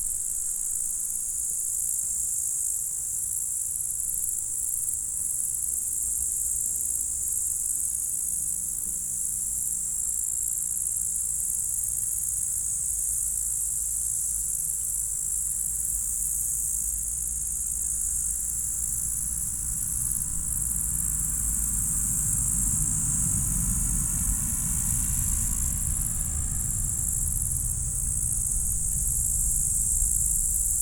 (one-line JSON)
{"title": "Chindrieux, France - prairie zen", "date": "2022-07-10 18:00:00", "description": "Au bord de la route du col du Sapenay une prairie sèche très diversifiée entourée de forêt, combinaisons rythmiques favorables à l'apaisement . Passage d'une voiture en descente et d'un scooter en montée.", "latitude": "45.83", "longitude": "5.87", "altitude": "846", "timezone": "Europe/Paris"}